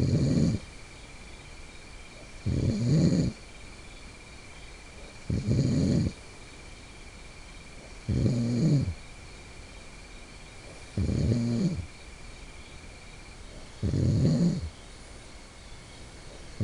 Pedernales Falls State Park, TX, USA - Friend Snoring in Tent after Vino
Recorded with a Marantz PMD661 and a pair of DPA 4060s.